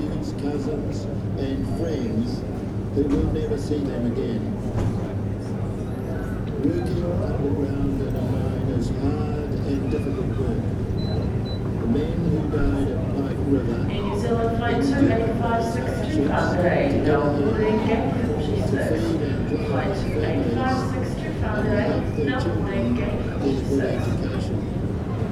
neoscenes: miners memorial in airport lounge